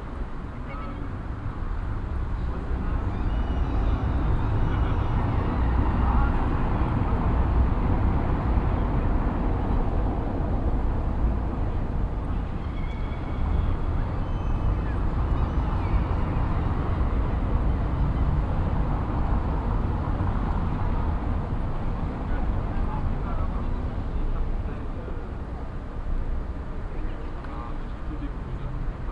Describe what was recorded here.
Quiet Sunday around noon at the town hall square with 2 PM chimes of the town hall clock. A few people around talking, a little traffic in a distance some gulls. Sony PCM-A10 recorder with Soundman OKM II Klassik microphone and furry windjammer.